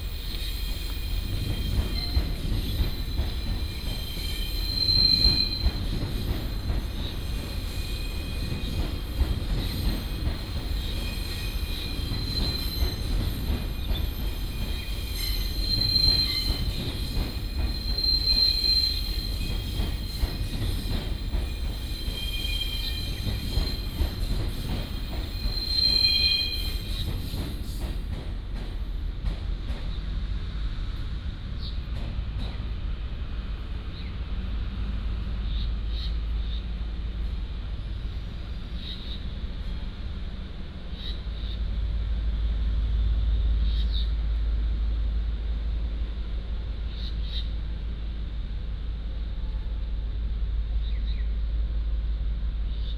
Near the railroad tracks, The train passes by, Bird calls
Changhua City, Changhua County, Taiwan